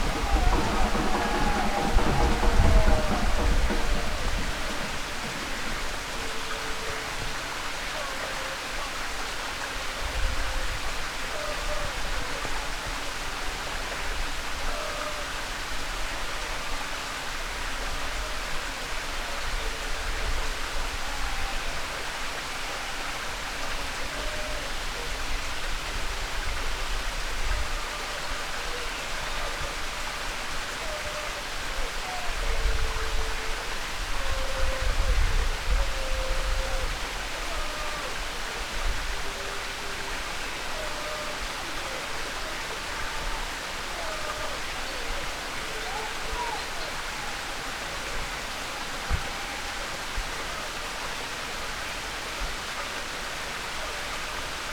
Madeira, north from Ribeira Brava - soccer match
while up high in the mountains i picked up sounds of cheering soccer fans coming for a very far away, reverberated off the cliffs.